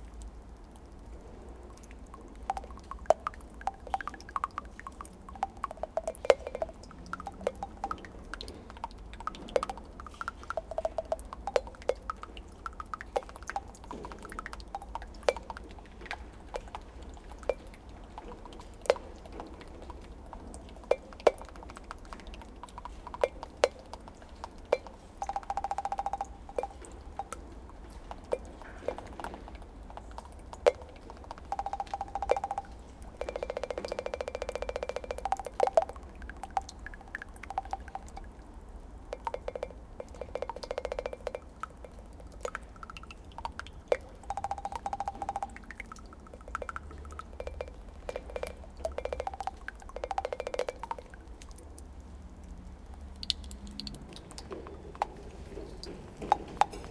Seraing, Belgium - Strange rain onto a dead rag
Into and abandoned factory, rain is falling on a very old piece of dead cloth. The rag makes some strange bubbles below the puddle. When drops are falling onto, it produces a curious music.
29 October 2017